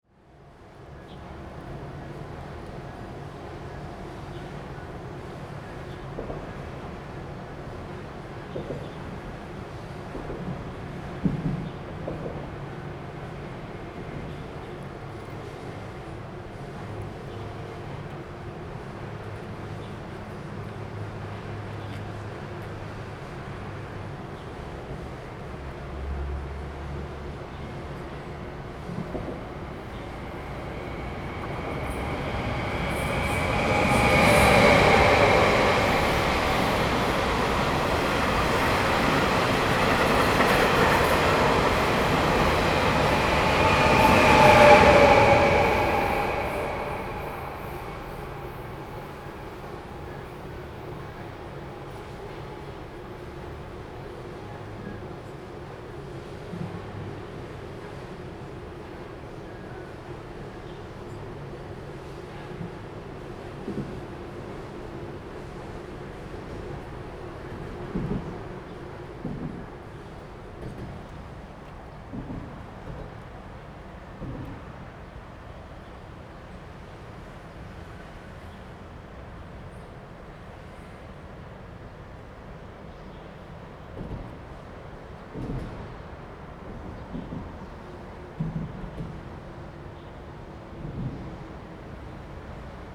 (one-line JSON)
{"title": "Jianguo S. Rd., Changhua City - Traffic sound", "date": "2017-02-15 14:21:00", "description": "Next to the railway, The train runs through, Traffic sound\nZoom H2n MS+XY", "latitude": "24.09", "longitude": "120.55", "altitude": "24", "timezone": "GMT+1"}